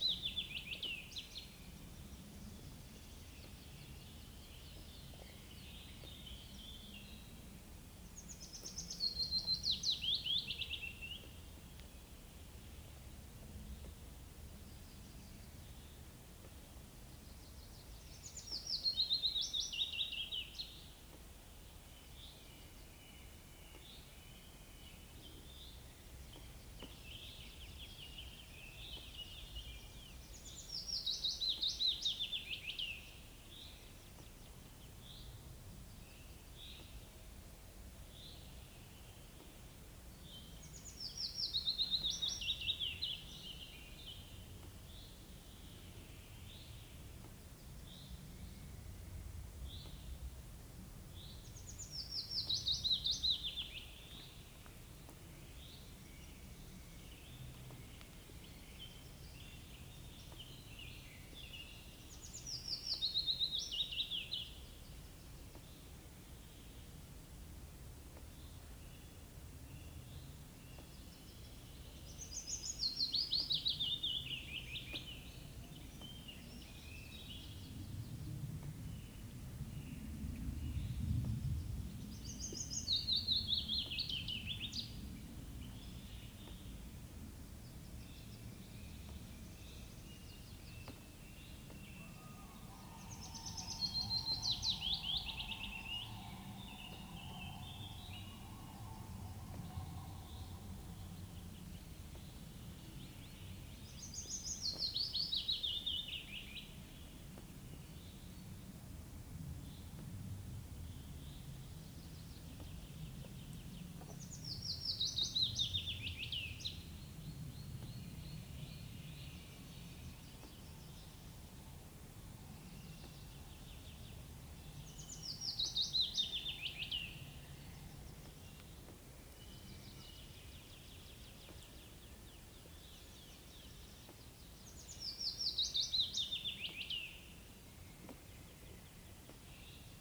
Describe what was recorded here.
Vogelsang, trees now grow unhindered throughout this abandoned Soviet military base, now a nature reserve. It is a 2km walk from the station or nearest road. One is free to explore the derelict buildings, which are open to the wind and weather. It is an atmospheric place that surprises with unexpected details like colourful murals and attractive wallpapers in decaying rooms. There is a onetime theater and a sports hall with ancient heating pipes dangling down the walls. Lenin still stands carved out in stone. Forest wildlife is abundant and the springtime birds a joy to hear.